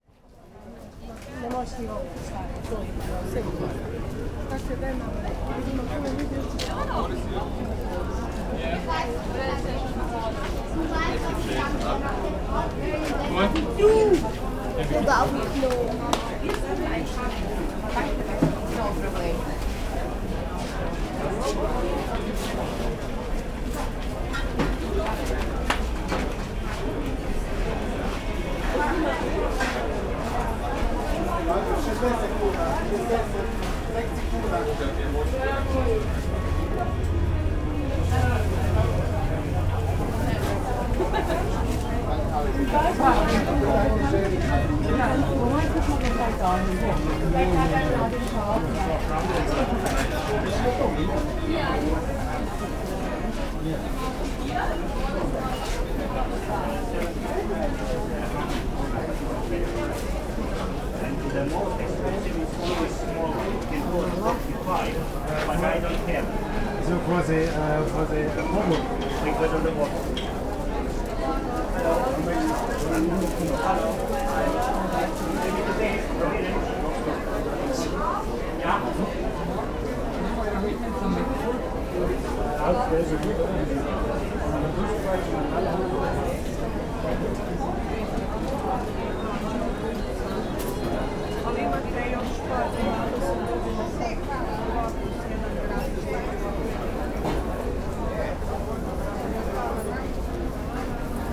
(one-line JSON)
{"title": "Narodni trg, Pula, Chorwacja - marketplace", "date": "2021-09-10 11:43:00", "description": "produce market in Pula. place bustling with customers and vendors. (roland r-07)", "latitude": "44.87", "longitude": "13.85", "altitude": "7", "timezone": "Europe/Zagreb"}